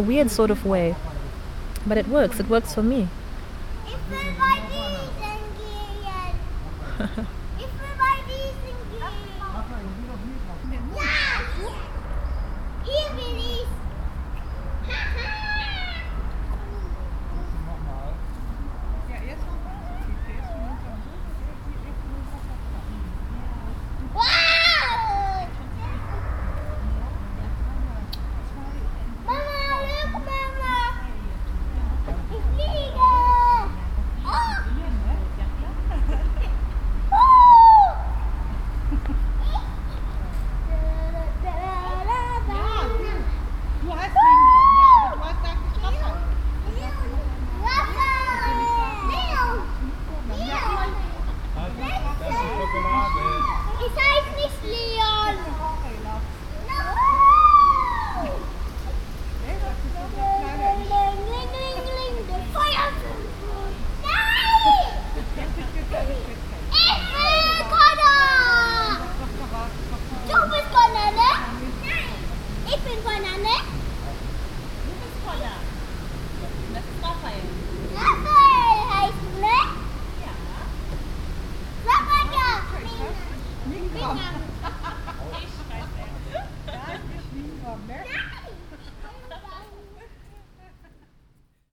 Hallohpark, Bockum-Hövel, Hamm, Germany - Song of the park...
Yvonne continues a little with her "song to the park"… then the park tokes over…
archived at:
11 September 2014